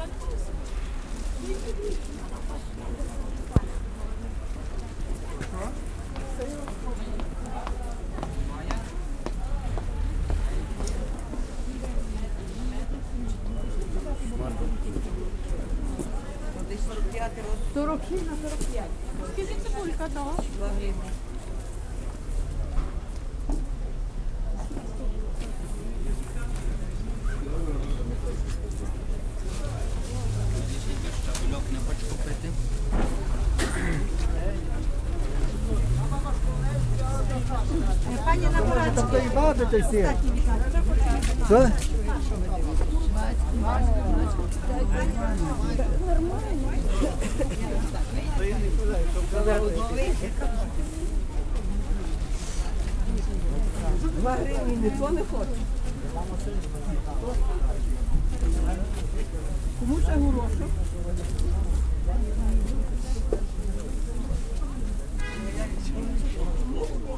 Stroll around the sidewalks surrounding the market, packed with vendors selling home-grown and -made produce. Binaural recording.
4 April 2015, 12:18